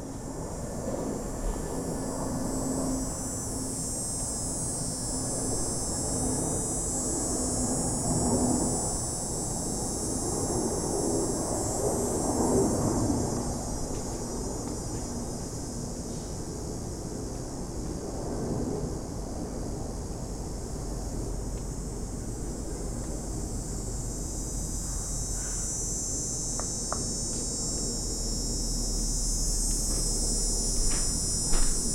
cicada arriving flights summer Minneapolis 20190902
Hennepin County, Minnesota, USA